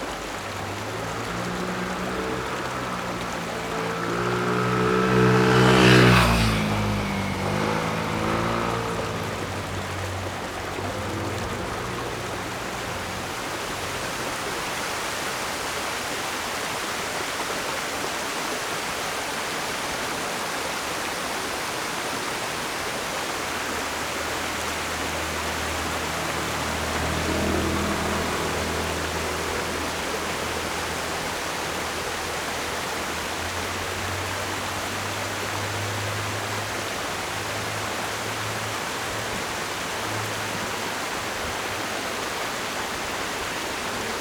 Yongfeng Rd., Tucheng Dist., New Taipei City - the stream
sound of water streams, Beside streams, Traffic Sound
Zoom H4n +Rode NT4
New Taipei City, Taiwan, 16 February 2012